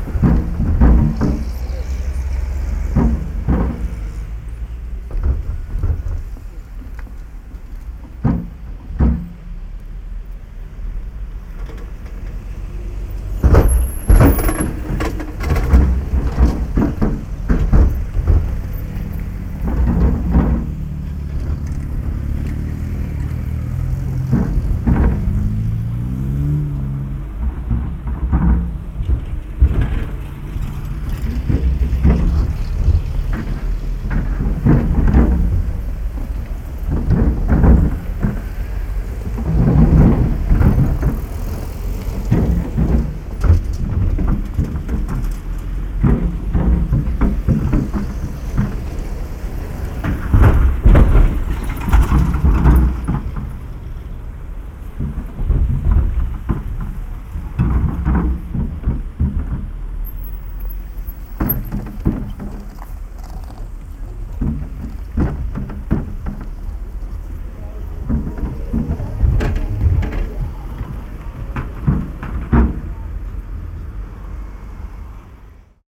Severodvinsk, Russia - railway crossing
Railway crossing.
Железнодорожный переезд на проспекте Морском.
Severodvinsk, Arkhangelsk Oblast, Russia